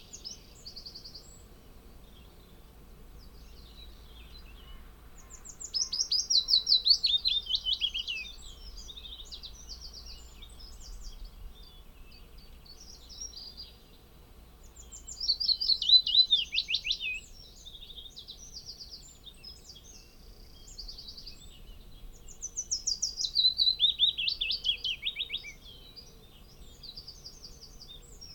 Luttons, UK - Willow warbler song soundscape ...
Willow warbler song soundscape ... binaural dummy head on tripod to minidisk ... bird calls and song from ... coal tit ... great tit ... blue tit ... whitehroat ... pheasant ... wood pigeon ... lapwing ... blackbird ... wren ... chaffinch ... blackcap ... some background noise ...